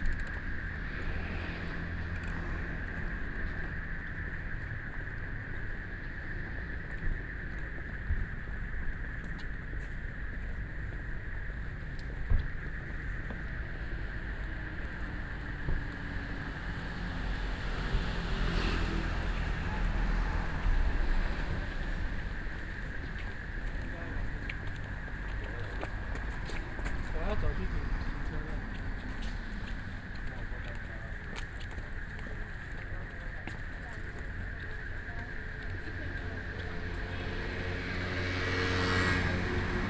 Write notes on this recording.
Walking along the lake, The park at night, Traffic Sound, People walking and running, Frogs sound, Binaural recordings